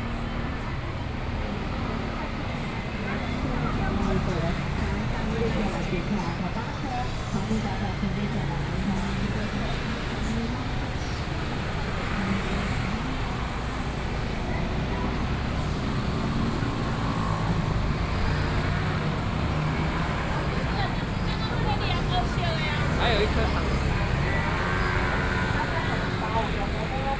Gongyuan Rd., Luodong Township - traditional market
Walking in different neighborhoods, Walking through the traditional market, Traffic Sound
Sony PCM D50+ Soundman OKM II
27 July, 10:45